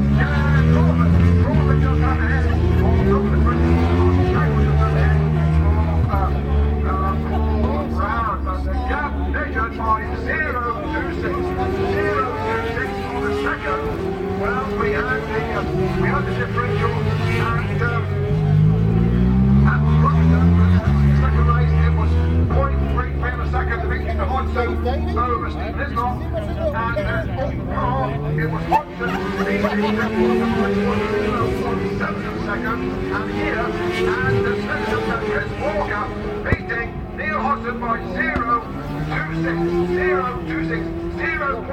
Unit 3 Within Snetterton Circuit, W Harling Rd, Norwich, United Kingdom - British Superbikes 2000 ... superbikes ...
British Superbikes ... 2000 ... race one ... Snetterton ... one point stereo mic to minidisk ... time approx ...
25 June